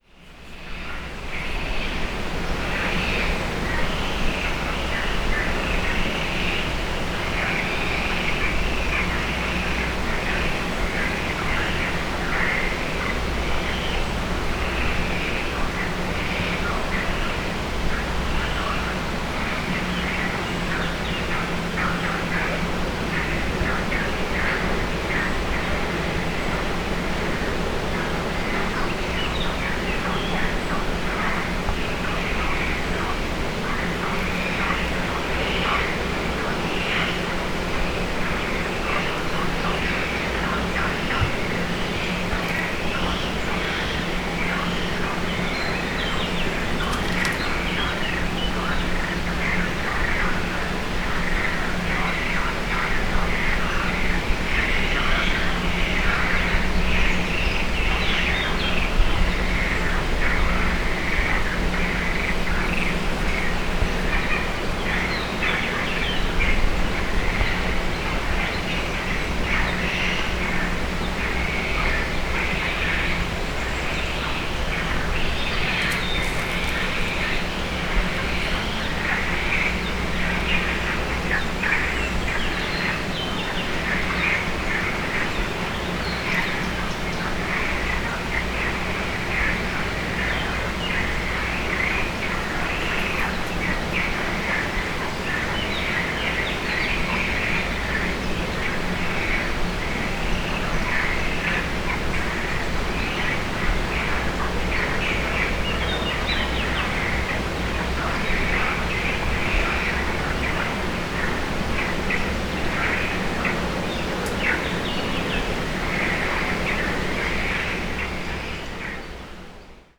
north from Funchal, levada towards Monte - frogs 1
lots of big frogs croaking in a stream a few meters below in a stream.